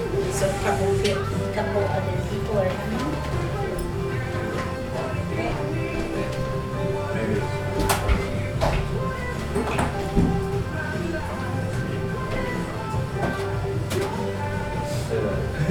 Treehouse restaurant Kaslo, BC, Canada - Treehouse Restaurant Kaslo BC
20 March 2014